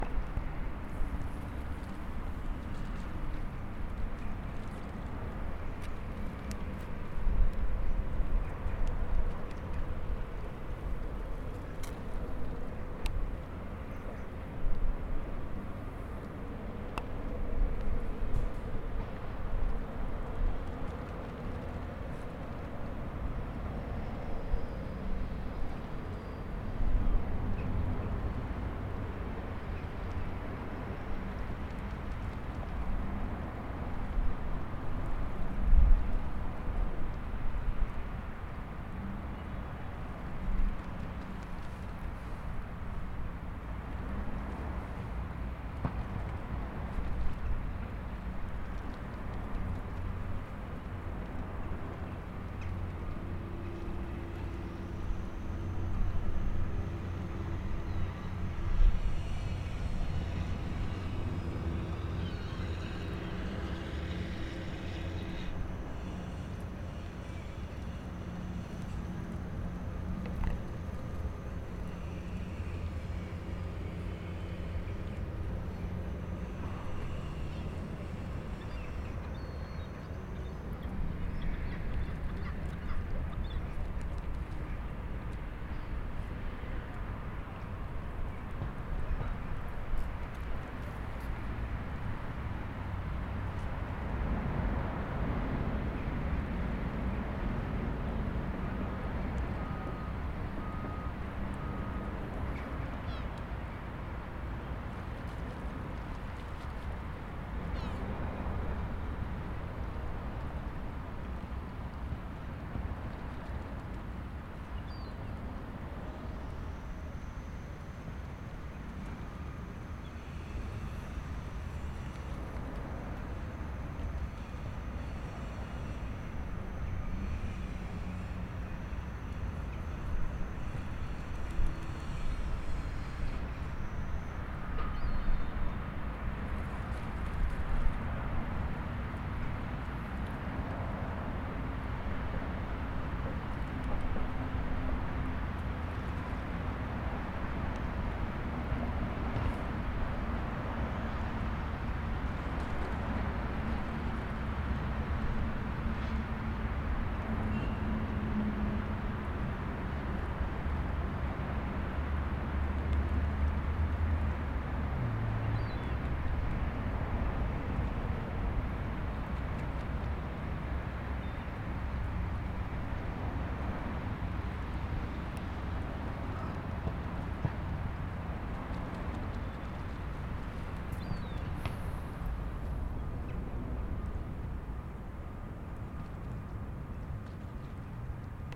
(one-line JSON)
{"title": "Binckhorst, Poolsterstraat, Den Haag - Poolsterstraat", "date": "2012-11-14 13:00:00", "description": "Recorded with Zoom H4n Portable Digital Recorder", "latitude": "52.07", "longitude": "4.33", "timezone": "Europe/Amsterdam"}